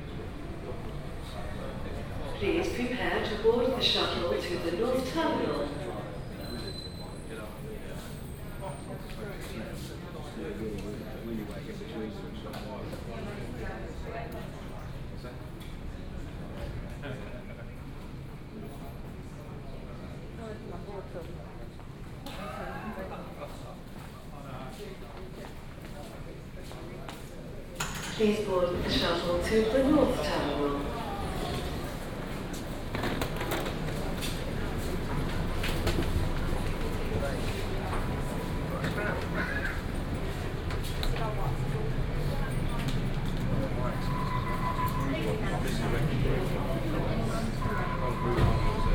London Gatwick Airport (LGW), West Sussex, UK - shuttle ride to north terminal
London Gatewick airport, shuttle ride to the north terminal, elevator, airport ambience
(Sony PCM D50, OKM2 binaural)